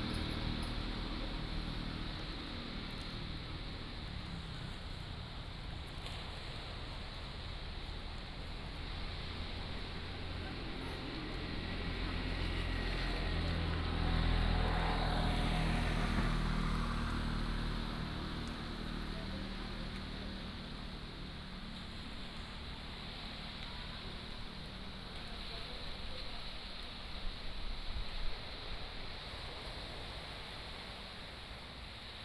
南寮村, Lüdao Township - Sitting on the banks
Sitting on the banks, Traffic Sound, Sound of the waves